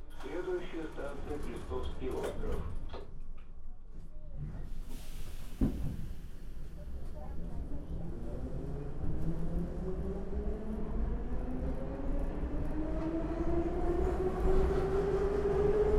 Петроградский р-н, Санкт-Петербург, Россия - METRO SAINT PETERSBURG